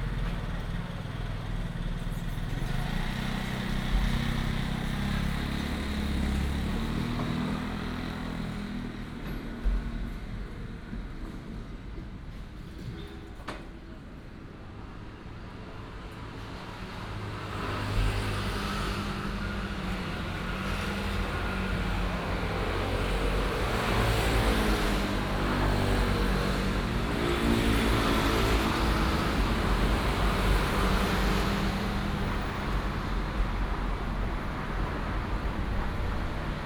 Sec., Longhua St., Zhongli Dist., Taoyuan City - At the traditional market entrance
At the traditional market entrance, Traffic sound, Binaural recordings, Sony PCM D100+ Soundman OKM II
Taoyuan City, Taiwan, 29 November 2017, 08:51